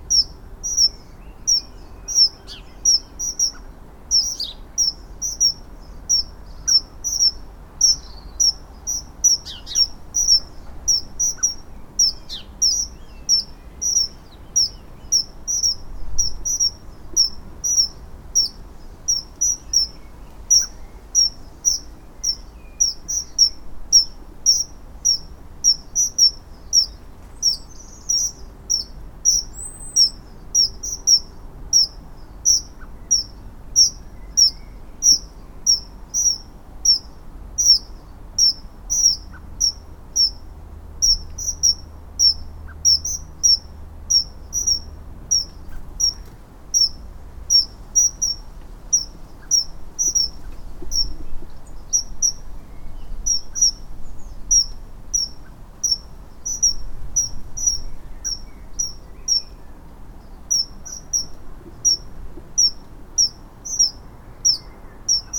A walnut tree, Katesgrove, Reading, Reading, UK - Sparrow in the Walnut tree
This year there are many sparrows nesting in the roof and in the garden. Their insistent call has really defined the texture of this spring and summer, a constant sonic presence in the garden. They especially like to sing in a nearby walnut tree but also in the tree which is next to this in the neighbouring garden. I strapped my recorder into the tree one fine afternoon to document these special sounds. You can also hear the red kites and the crows that live in our neighbourhood. I really love these sounds as a kind of foreground for the background sounds of where we live - the vague and omnipresent traffic bass; the deep blurry presence of planes in the sky; and the soughing of the wind through all the close together suburban gardens... you can hear blackbirds too. Sorry it's a bit peaky in places... the sparrow got quite close to the recorder I think. Maybe he wants to be a rockstar of aporee.
12 May, 14:20